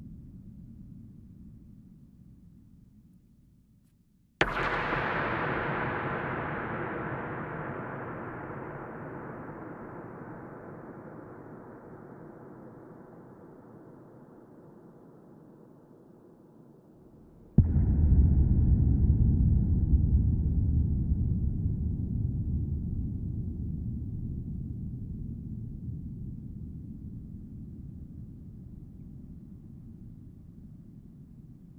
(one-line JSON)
{"title": "Havnevej, Struer, Danmark - Struer Harbor sounds from a empty big oil tank", "date": "2022-09-30 09:00:00", "description": "Throwing stones into a large oil tank and pounding on the wall with my fist. recorded with Rode\nNT-SF1 Ambisonic Microphone. Øivind Weingaarde", "latitude": "56.49", "longitude": "8.61", "altitude": "2", "timezone": "Europe/Copenhagen"}